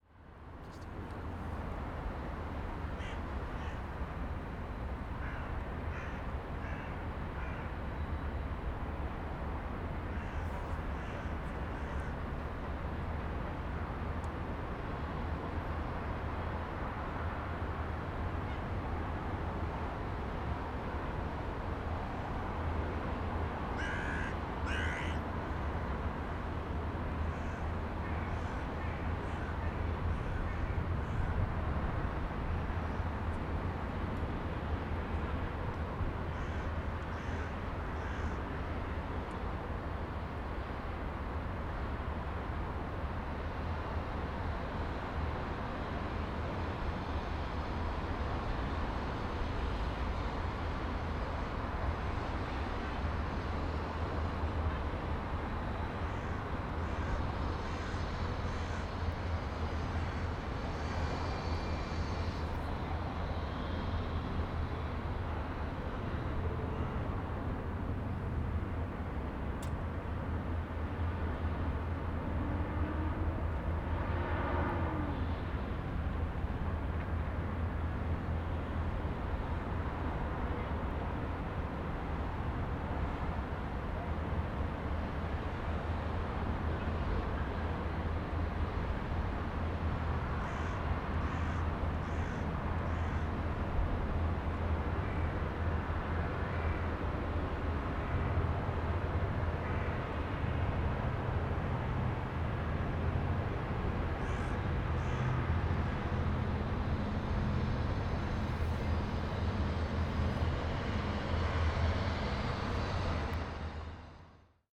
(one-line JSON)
{"title": "ITÜ Architechture bldg survey, Roof Terrace", "date": "2010-03-06 23:49:00", "description": "sonic survey of 18 spaces in the Istanbul Technical University Architecture Faculty", "latitude": "41.04", "longitude": "28.99", "altitude": "62", "timezone": "Europe/Tallinn"}